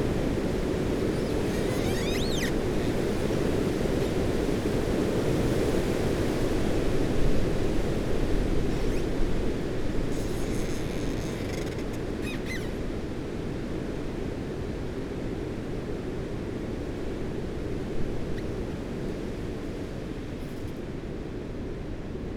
Luttons, UK - Humpback tree ...
Branches rubbing and creaking in a gale ... lavalier mics in a parabolic ...